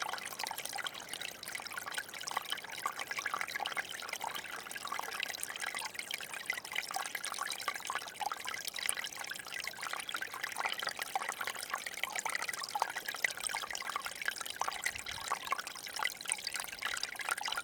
My favourite place: a valley with small river. Three parts recording. First part is atmosphere of the place, in the second part mics are right on a tiny ice of river and third part - contact mics on iced branch
Utenos rajono savivaldybė, Utenos apskritis, Lietuva, 12 December 2020, 15:30